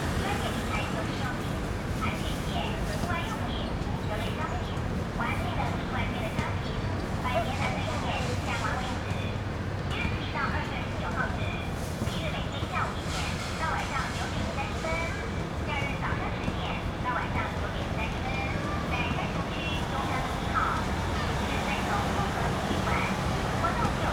Broadcasting vans, Next to the street, Play basketball, Market consolidation sounds, Rode NT4+Zoom H4n